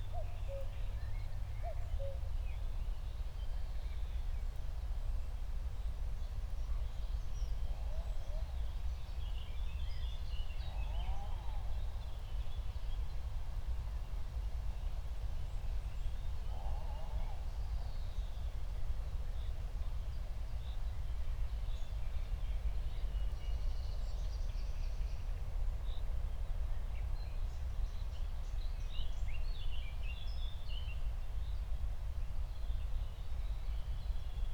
Berlin, Buch, Mittelbruch / Torfstich - wetland, nature reserve
09:00 Berlin, Buch, Mittelbruch / Torfstich 1